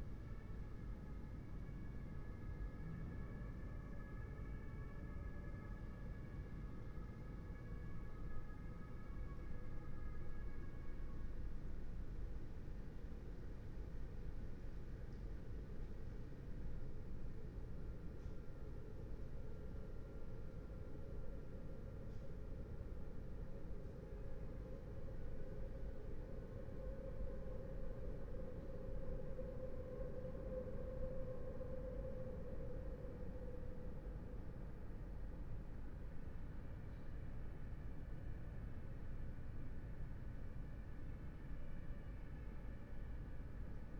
Berlin Bürknerstr., backyard window - Hinterhof / backyard ambience
23:31 Berlin Bürknerstr., backyard window
(remote microphone: AOM5024HDR | RasPi Zero /w IQAudio Zero | 4G modem